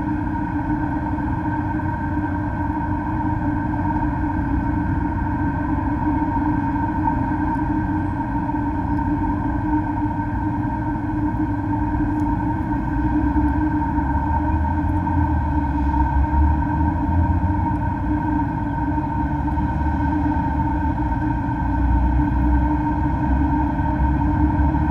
Maribor, Slovenia - one square meter: rusty pipe
a rusty length of pipe, approximately 50cm and open at both ends, lays in the grass near the concrete wall. one omnidirectional microphone is inserted in each end. all recordings on this spot were made within a few square meters' radius.
28 August